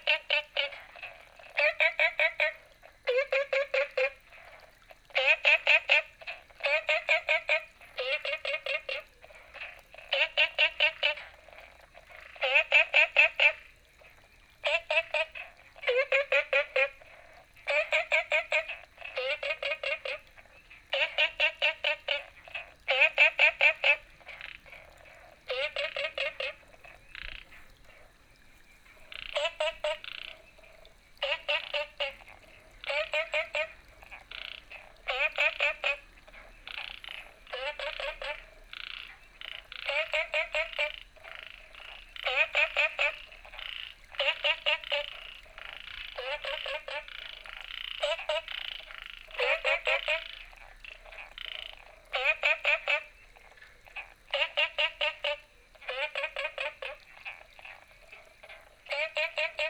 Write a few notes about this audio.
Small ecological pool, All kinds of frogs chirping